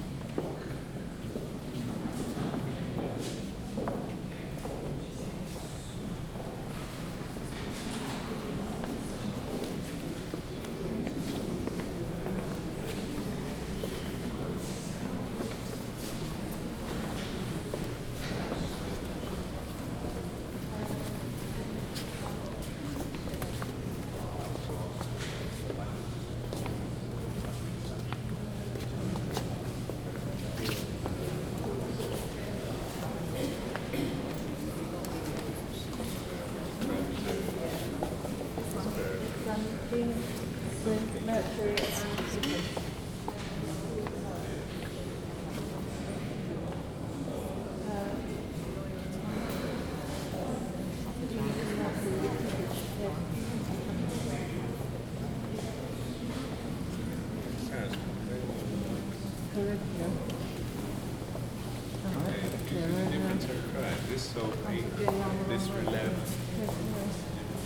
Royal Academy of Arts, Burlington House, Piccadilly, Mayfair, London, UK - Charles I: King and Collector Exhibition, Royal Academy of Arts.
Recorded walking through the Royal Academy Charles I: King and Collector exhibition.
Recorded on a Zoom H2n